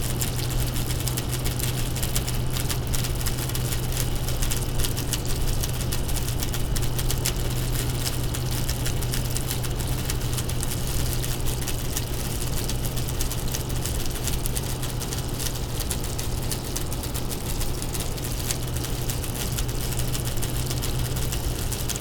Mile End, Montreal, QC, Canada - Air conditioner broken with water drop by night
Air conditioner broken with water drop by night
REC: DPA 4060, AB